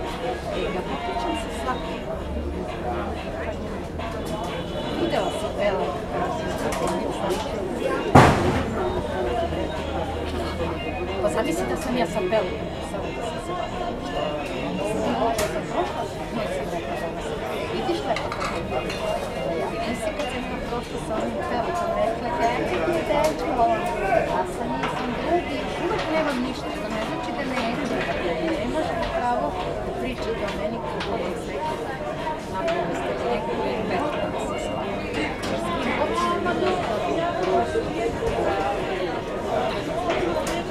'after sales gatherings': chit-chats around chess and jelen